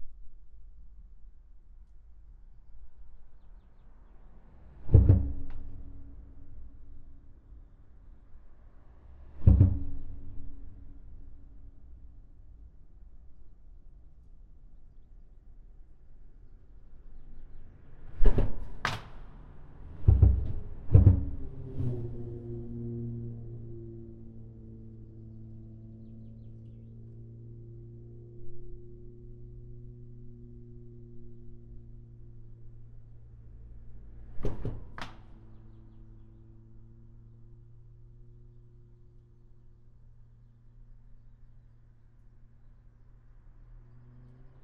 Saint-Nicolas-de-Bliquetuit, France - Brotonne bridge
The Brotonne bridge, recorded inside the bridge. This is an extremely quiet bridge compared to the Tancarville and Normandie ones, the only two other bridges above the Seine river. No trucks here, it's so quiet !
July 2016